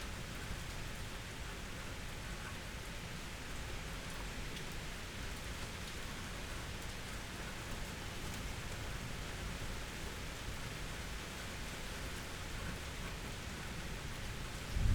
a tunderstorm was expected, but didn't arrive, just a bit of wind, and a few drops.
(Sony PCM D50, Primo EM172)